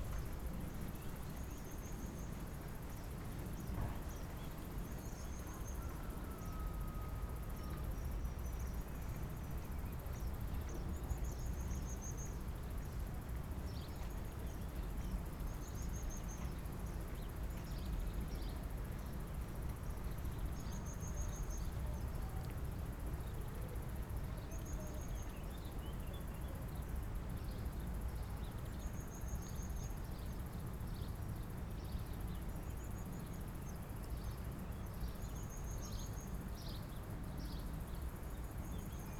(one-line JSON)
{"title": "Povoa Das Leiras, Portugal near waste container - PovoaDasLeirasWasteContainer", "date": "2012-07-19 11:00:00", "description": "people walking through the field bringing plastic bags to the waste container.", "latitude": "40.85", "longitude": "-8.17", "altitude": "750", "timezone": "Europe/Lisbon"}